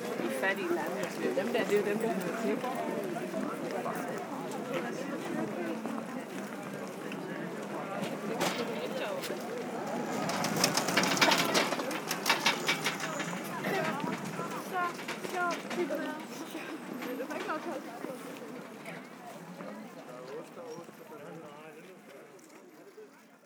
København, Denmark - Christiania anarchist disctrict
A short walk into the Christiania district, a free area motivated by anarchism. People discussing, drinking a lot, and buying drugs to sellers.